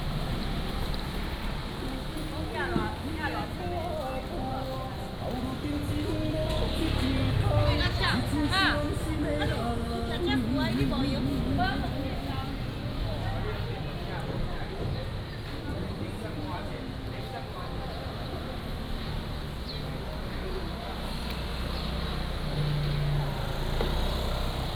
陽明里, Magong City - Walking through the market
Walking through the market
23 October 2014, 06:49